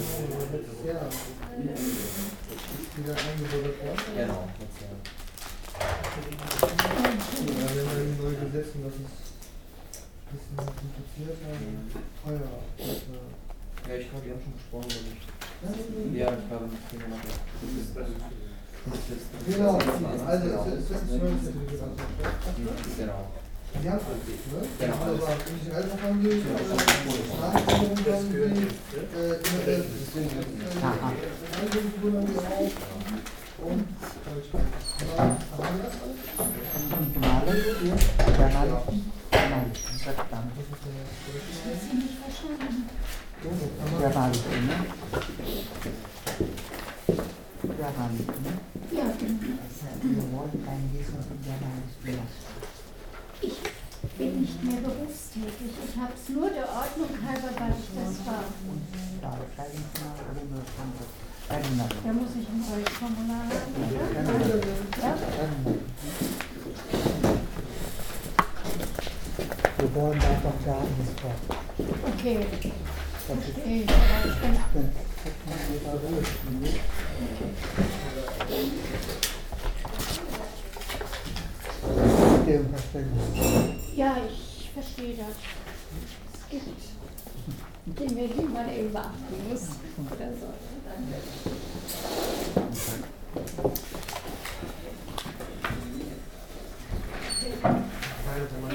cologne, bayardsgasse 5, indo german consultancy services
inside the indo german consultancy services. small office where people apply for visa to travel to asian countries.
soundmap d - social ambiences and topographic field recordings
Cologne, Germany